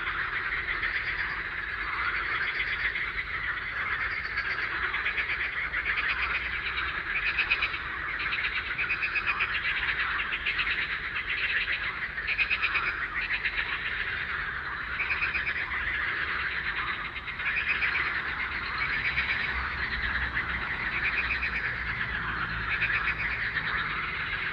Moeras van Wiels, Luttrebruglaan, Vorst, Belgium - Frog chorus at night
Woodland park with some beautiful and very tall beech trees.